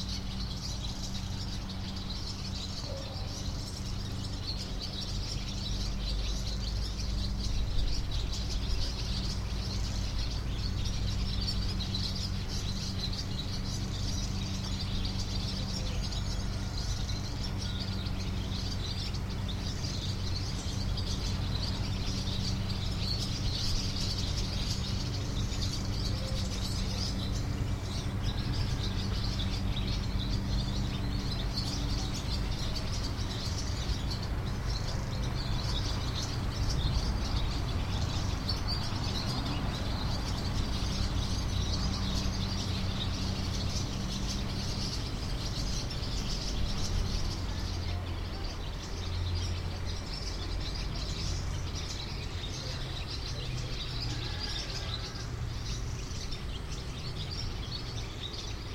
swallows at the trees near Malá chuchle